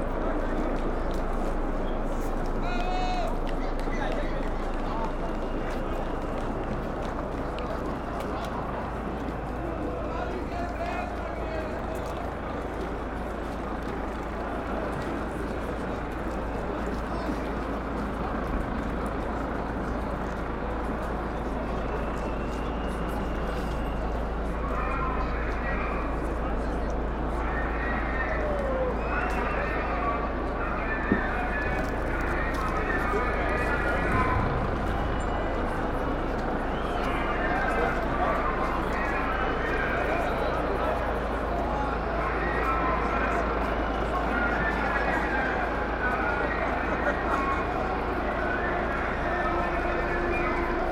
demonstrations, police chopper, with megaphone calling to people not to violate public order laws